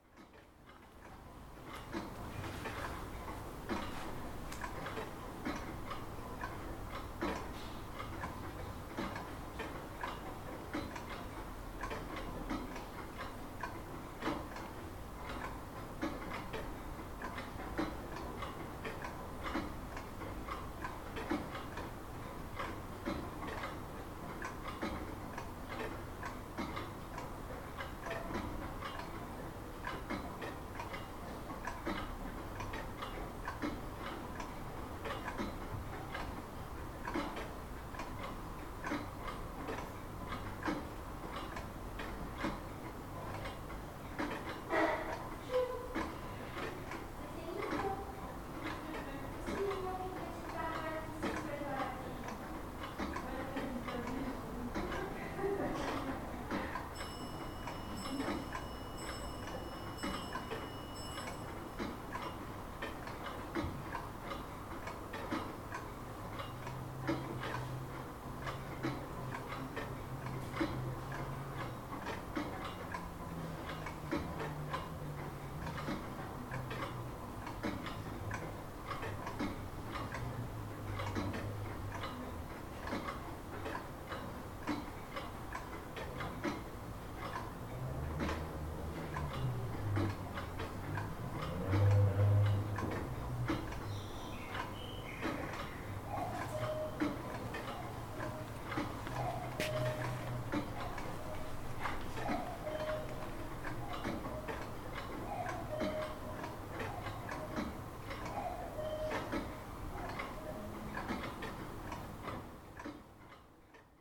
Espace Carpano & Pons, Pl. du 11 Novembre, Cluses, France - Symphonie horlogère
Musée de l'horlogerie à Cluses. Calme et tic tac horlogers .